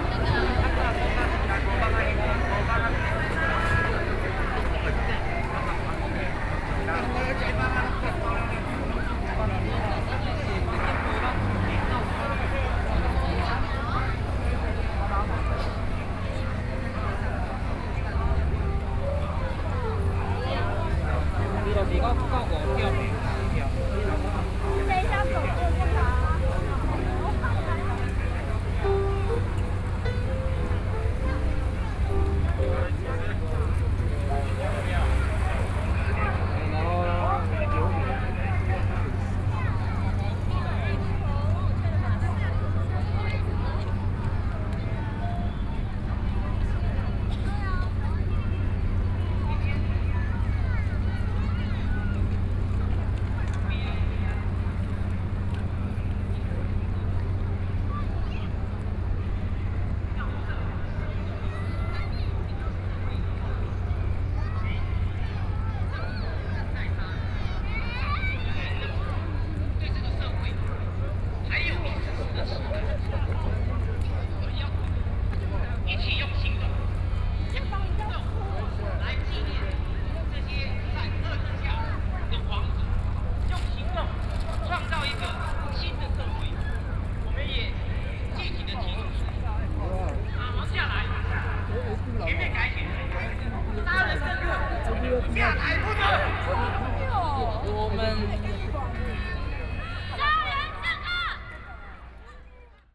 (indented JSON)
{
  "title": "National Dr. Sun Yat-sen Memorial Hall - Holiday Plaza",
  "date": "2013-09-29 16:37:00",
  "description": "Holiday Plaza on the market with the crowd, Square crowd of tourists and participants from all over the protests of the public, Sony PCM D50 + Soundman OKM II",
  "latitude": "25.04",
  "longitude": "121.56",
  "altitude": "15",
  "timezone": "Asia/Taipei"
}